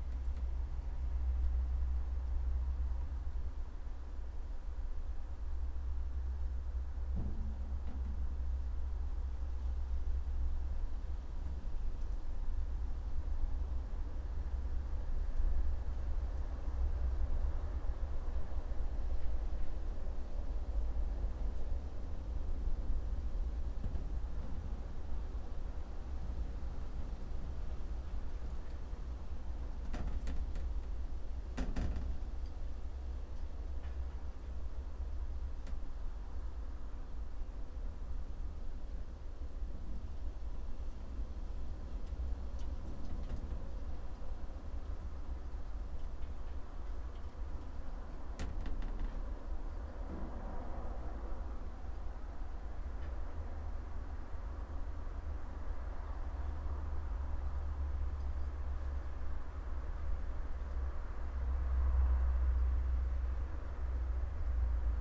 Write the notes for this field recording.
inside of a cabin of a huge spider like construction crane - winds, rustling of leaves, cables, birds